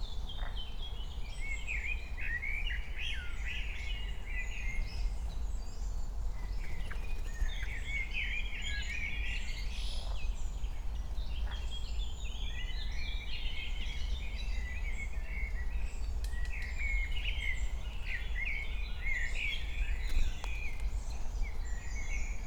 Königsheide, Berlin - forest ambience at the pond
11:00 drone, frogs, voices, fluttering wings, woodpecker
Deutschland, 23 May 2020